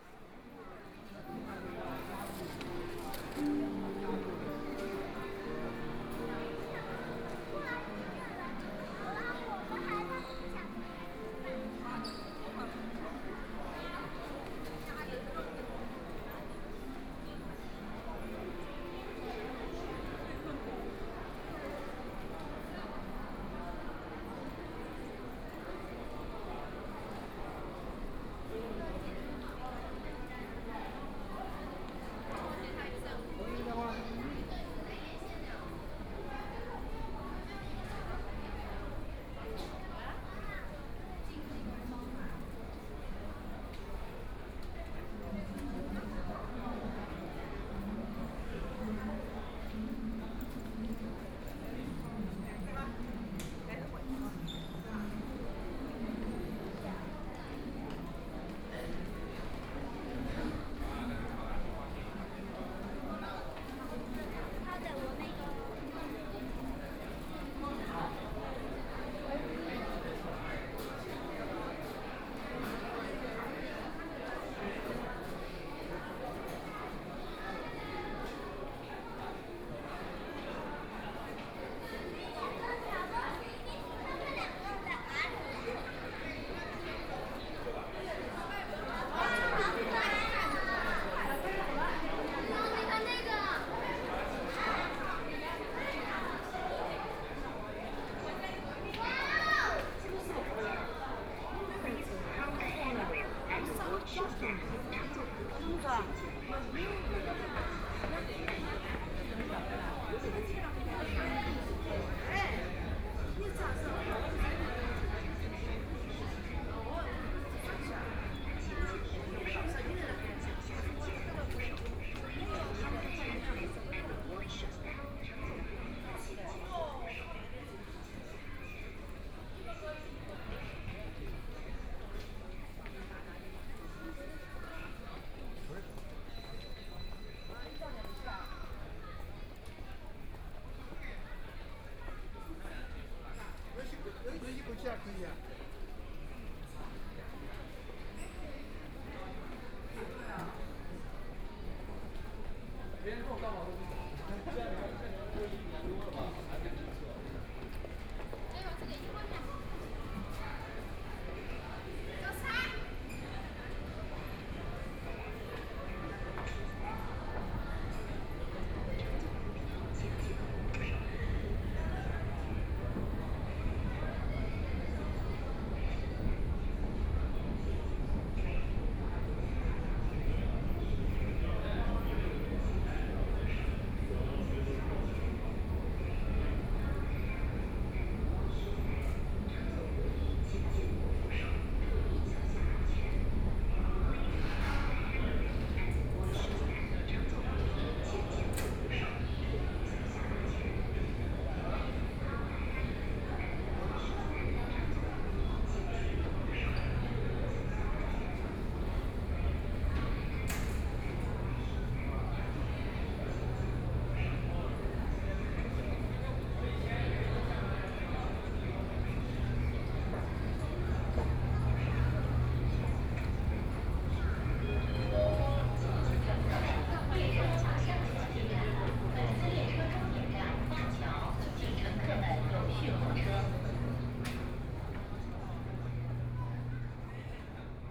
Xujiahui, Shanghai - soundwalk
Through the underground passage to the subway station, Voice message broadcasting station, In the subway station platform, Crowd, Binaural recording, Zoom H6+ Soundman OKM II
Shanghai, China